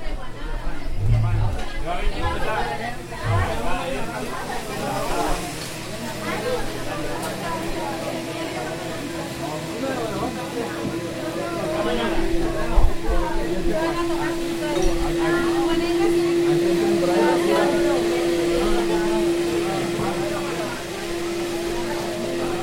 Socorro, Santander, Plaza de Mercado
frutas, verduras, jugos